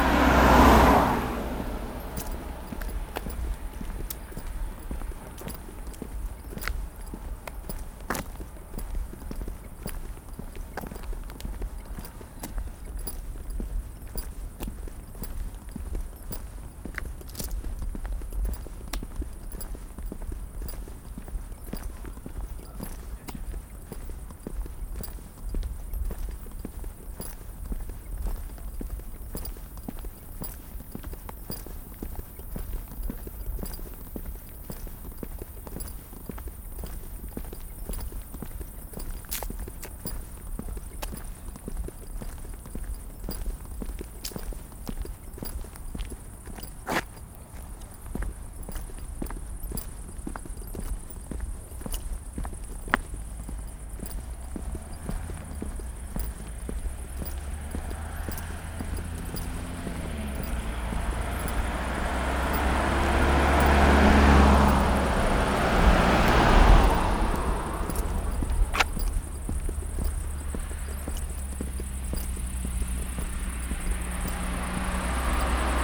Maribor, Slovenia - Rhytmic walk out of the city
An excerpt of a lengthy walk out of the city, with a lot of bags on the shoulders causing interesting rhythmic patterns.
15 August 2012